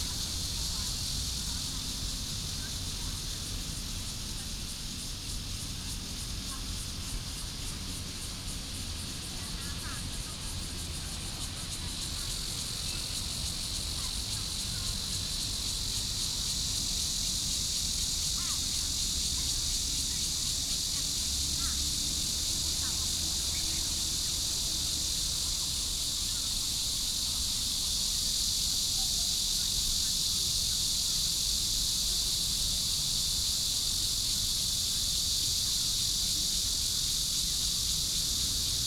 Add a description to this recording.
in the Park, Cicada cry, traffic sound, Retired elderly and women